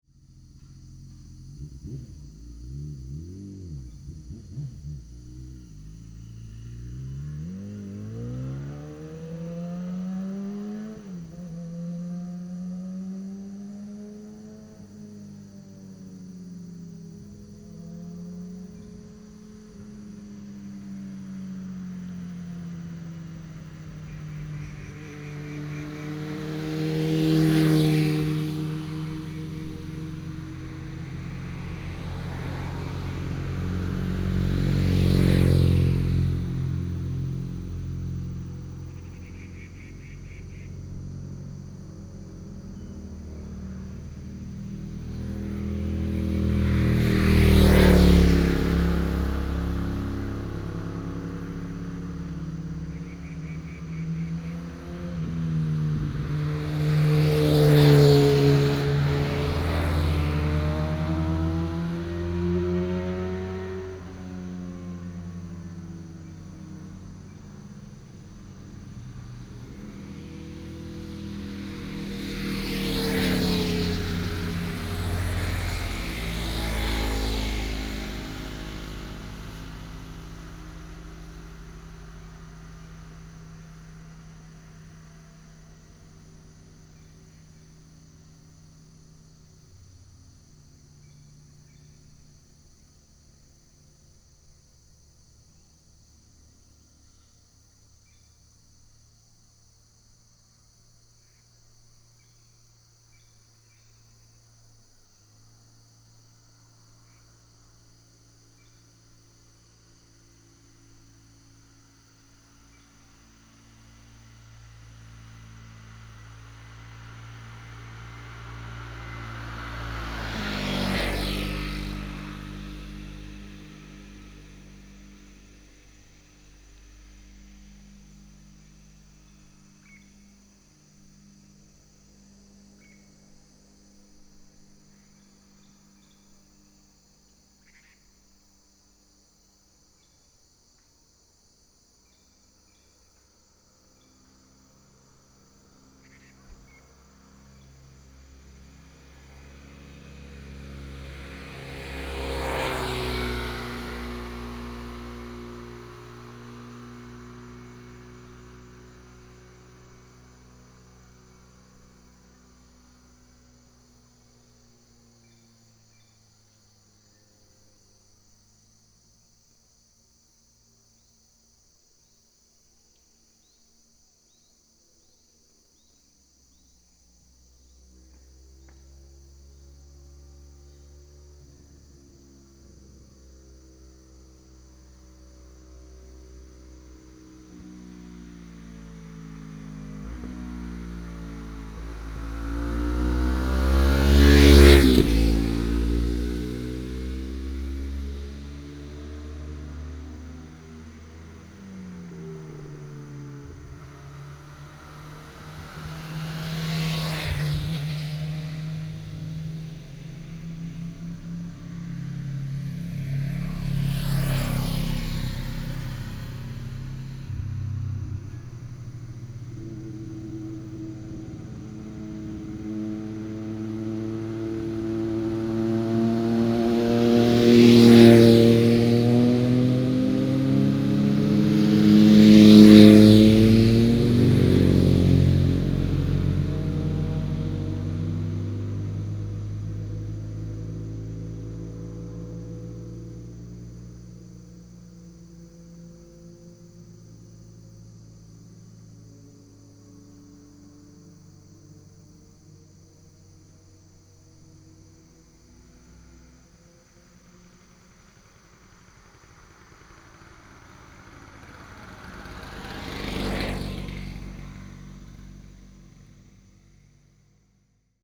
中豐公路, Shitan Township, Miaoli County - motorcycle and bird
Birds sound, Holiday early morning, Very heavy locomotives on this highway, Binaural recordings, Sony PCM D100+ Soundman OKM II
Shitan Township, Miaoli County, Taiwan